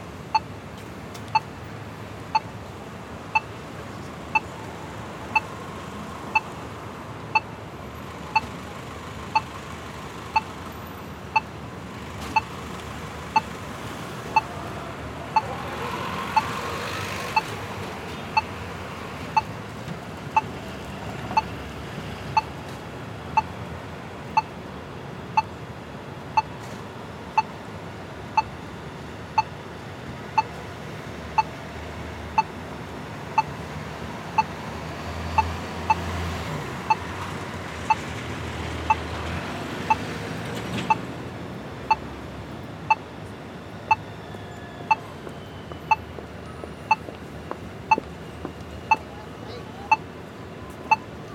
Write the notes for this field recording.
Audible crosswalk signal at Lexington Ave, Manhattan.